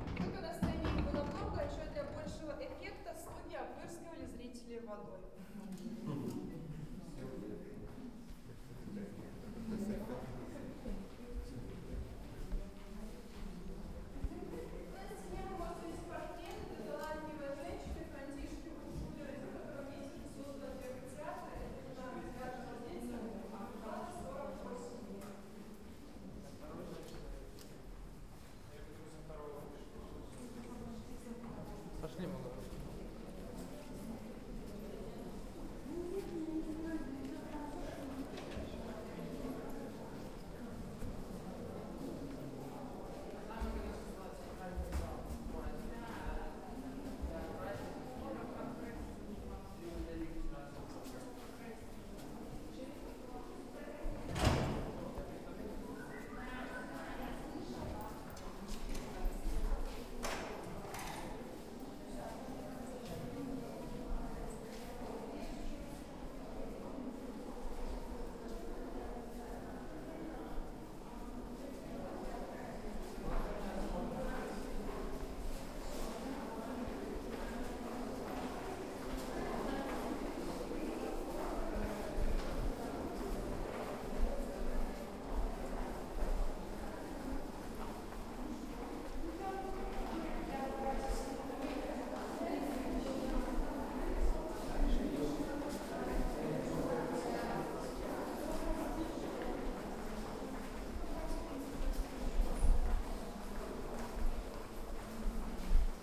Niaśviž, Belarus, castle
at the theatre exposition hall
Zamkavaja vulica, Niaśviž, Belarus, 1 August, 18:00